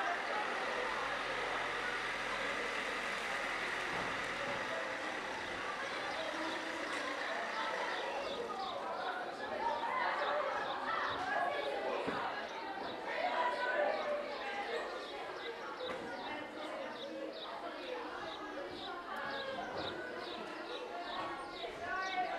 L'Aquila, Scuola media Mazzini - 2017-05-22 04-Scuola Mazzini
ripresa a una distanza di 5/10 metri dalle finestre dalle aule della Scuola Mazzini, L'Aquila